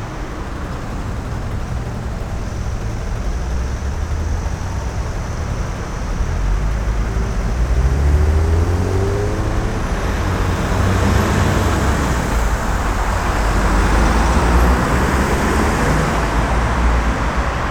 {"title": "Kunstmuseum Bonn, Friedrich-Ebert-Allee, Bonn, Deutschland - Bonn Friedrich Ebert Allee", "date": "2010-08-23 12:55:00", "description": "A \"classical\" modern soundscape in front of the Kunstmuseum Bonn", "latitude": "50.72", "longitude": "7.12", "altitude": "65", "timezone": "Europe/Berlin"}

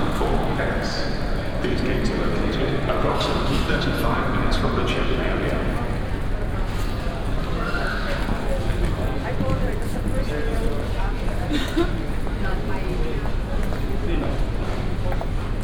{"title": "Madrid-Barajas Airport, terminal - a loop around the terminal", "date": "2014-12-01 13:05:00", "description": "(binaural) a walk around the terminal. passing by caffees, bar, shops, gates, riding moving pathwalks.", "latitude": "40.49", "longitude": "-3.59", "altitude": "610", "timezone": "Europe/Madrid"}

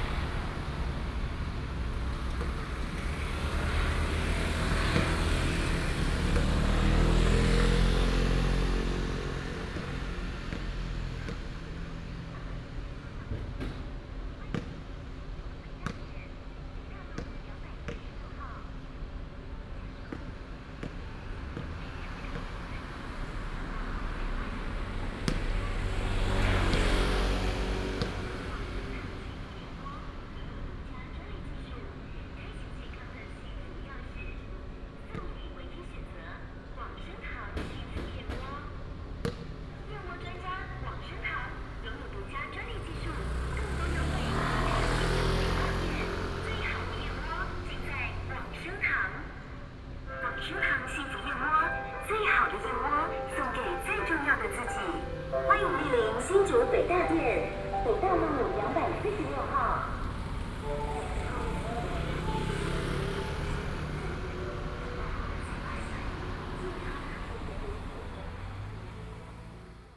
{"title": "金山街, Hsinchu City - basketball court", "date": "2017-09-27 14:53:00", "description": "In the corner of the basketball court, traffic sound, Binaural recordings, Sony PCM D100+ Soundman OKM II", "latitude": "24.78", "longitude": "121.02", "altitude": "96", "timezone": "Asia/Taipei"}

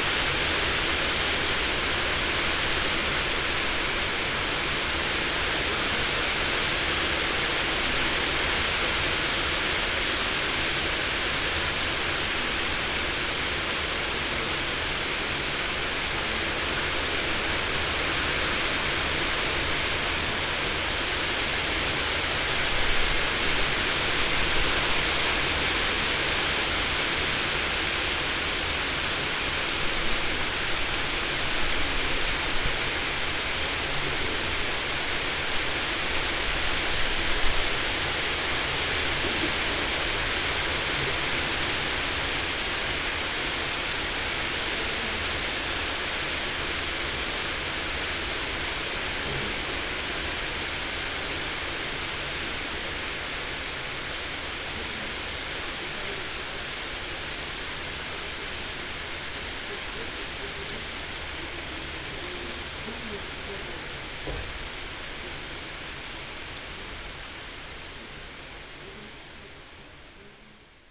{"title": "Каптаруны, Беларусь - Kaptaruni by day", "date": "2015-08-23 14:17:00", "description": "wind in the nearby Sleepy Hollow\ncollection of Kaptarunian Soundscape Museum", "latitude": "55.11", "longitude": "26.26", "altitude": "227", "timezone": "Europe/Vilnius"}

